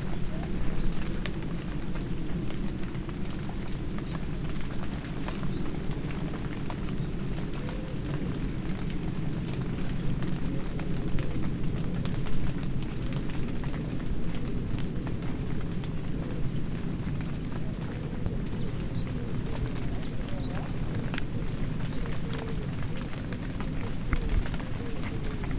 Cley Next the Sea, Norfolk, UK - Mist on the trees
Early morning in Cley for World Listening Day. The mist was heavy and with the sound of it dripping from the trees along with the humidity I felt I'd touched a part of a rainforest.